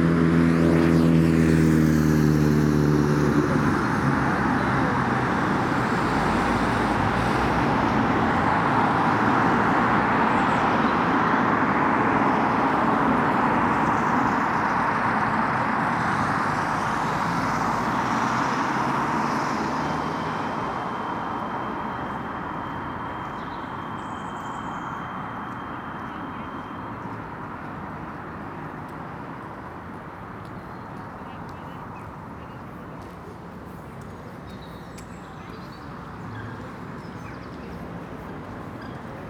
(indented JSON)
{
  "title": "Contención Island Day 48 inner southeast - Walking to the sounds of Contención Island Day 48 Sunday February 21st",
  "date": "2021-02-21 10:04:00",
  "description": "Snatches of talk as people run\nwalk\nand wait to cross\nFathers push buggies\nof sleeping babies\nThe runners wait\ncheck their time\nhands on knees\nJackdaws explore\na chimney",
  "latitude": "55.00",
  "longitude": "-1.61",
  "altitude": "67",
  "timezone": "Europe/London"
}